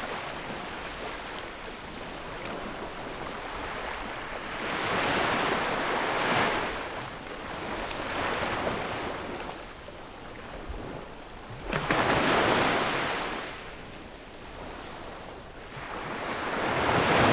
芹壁村, Beigan Township - At the beach
Sound of the waves, At the beach
Zoom H6 +Rode NT4
15 October, 12:12